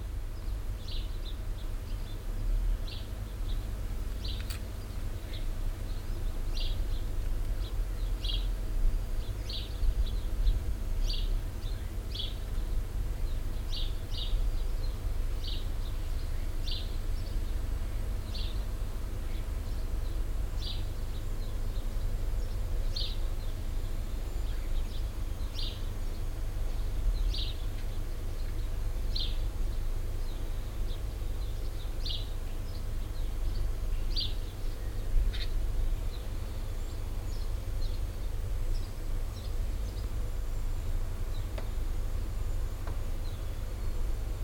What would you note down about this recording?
morgens im garten, emsige natur, leichte winde, fieldrecordings international: social ambiences, topographic fieldrecordings